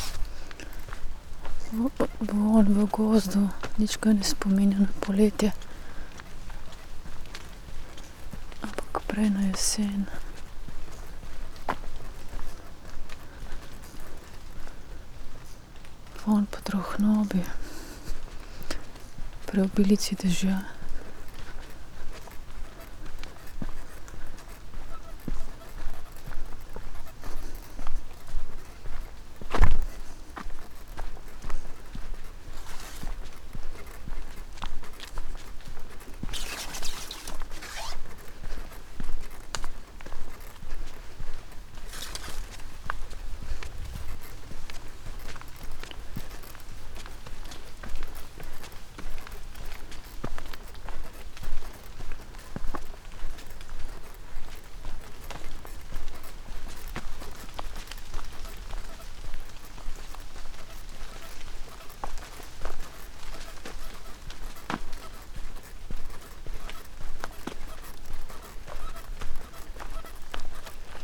path of seasons, august forest, piramida - walking poem
walking, uttering, almost dark, light rain, drops, squeaking umbrella
Maribor, Slovenia, 12 August 2014, 21:17